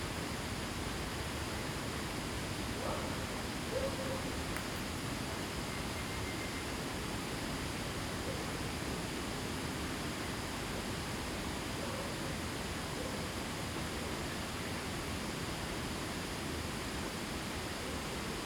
TaoMi River, 埔里鎮桃米里 - Standing stream side
Birds singing, Dogs barking, Brook, A small village in the evening
Zoom H2n MS+XY
Puli Township, 桃米巷55-5號, August 2015